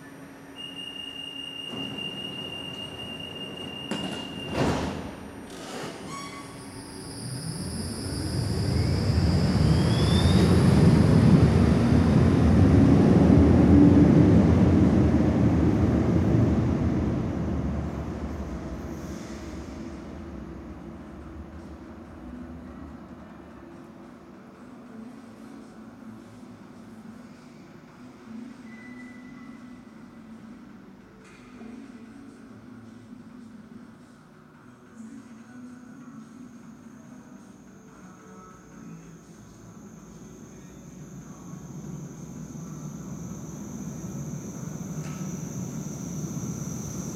Saint-Gilles, Belgique - Station Parvis de Saint-Gilles
Tram 51 (old model), 3 & 4 (new models) at the station, voice announcements.
Tech Note : Olympus LS5 internal microphones.
Saint-Gilles, Belgium, 23 May 2022